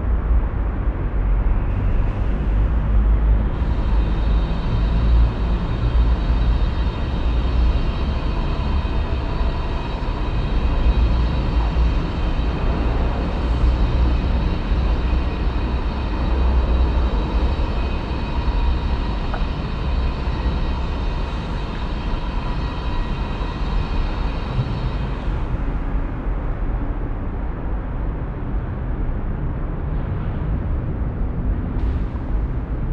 Central Area, Cluj-Napoca, Rumänien - Cluj, catholic church
Inside the catholic church. The sound of reverbing traffic inside the wide and high open reflective hall. Silent steps and whispers of the visitors. At the end also sound of an outdoor construction.
international city scapes - topographic field recordings and social ambiences
Cluj-Napoca, Romania